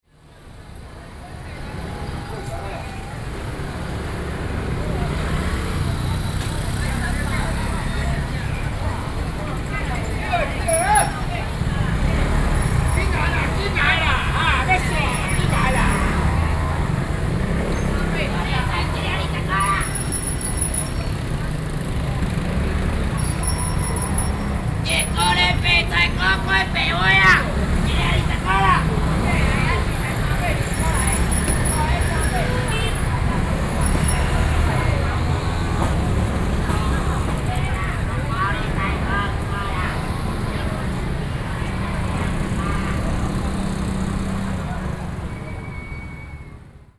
New Taipei City, Taiwan - Traditional markets